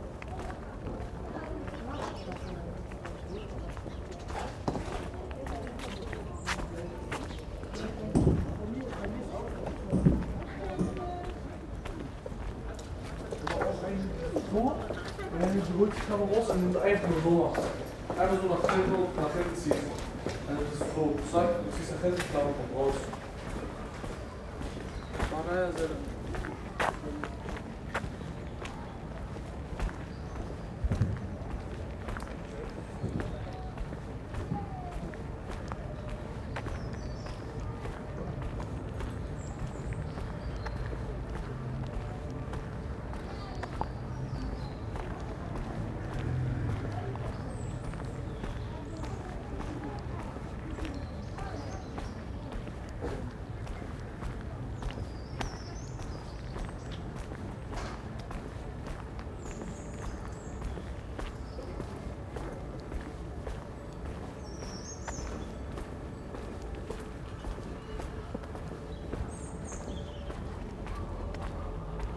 Bergfriedstraße, Berlin, Germany - Backyard reverb – so much that sirens becomes continuous
A walk recording through the Hinterhof on the map, voice reverberate in the tunnel under the apartment blocks. A robin sings - good to hear they've started again after their summer/early autumn break. The acoustics in here are so strong that sirens sounds merge into a continuous tone.